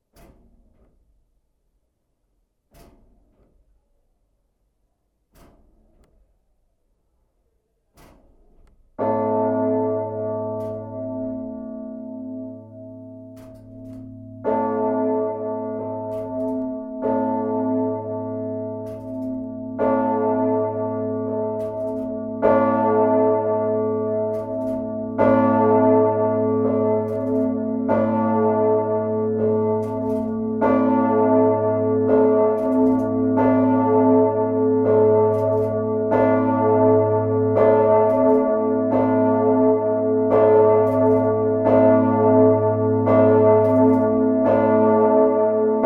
Vieux-Lille, Lille, France - Lille bell
The Lille cathedral big bell. I ring it manually, making contacts in the electrical table, as the system is completely defective. Two monthes after, the second bell lost its clapper. Quite a dangerous place to record... This recording was made during the writing of a book about the Lille cathedral.